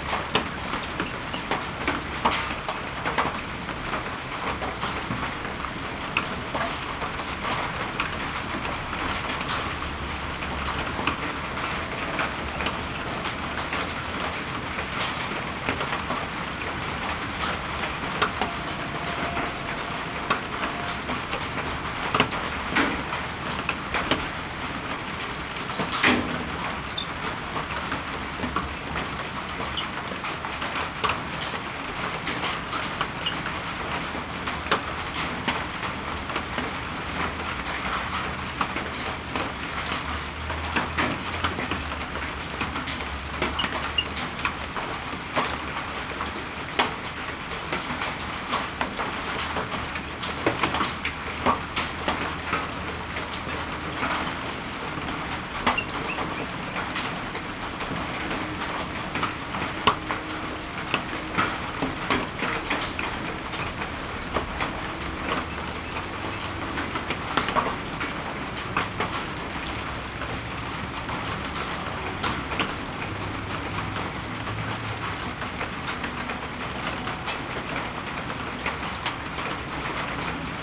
Blackland, Austin, TX, USA - Rain After the Eclipse 2
Recorded with a Pair of DPA4060s and a Marantz PMD661